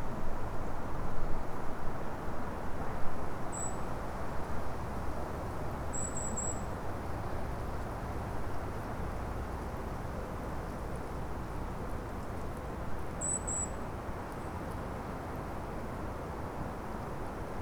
Wyspa Sobieszewska, Gdańsk, Poland - Las ambience
Las / Forest ambience.